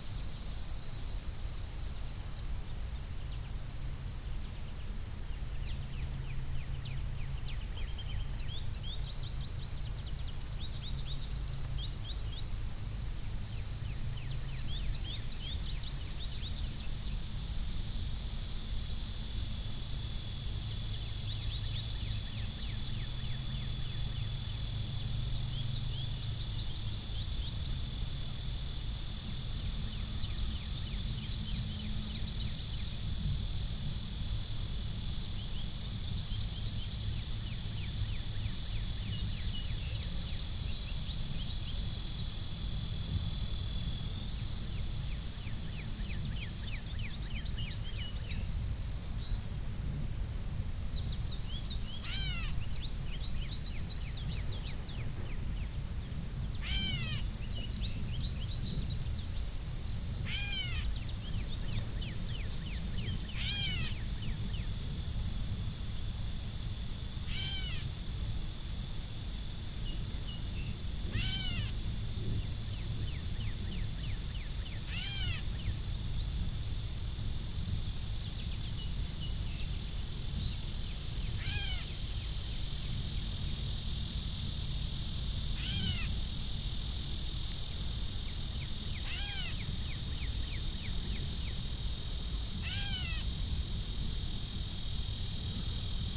Grass Lake Sanctuary - Nature Trail
Sitting on this nature trail, listening to the sounds of birds and bugs come and go.